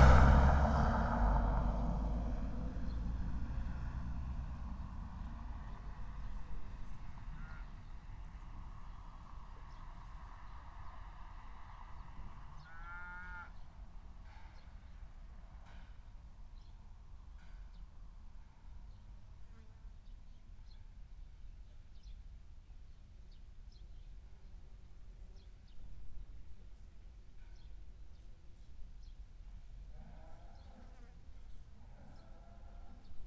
it heidenskip: ursuladijk - the city, the country & me: howling cows
howling cows in the stable, car passing by
the city, the country & Me: july 11, 2015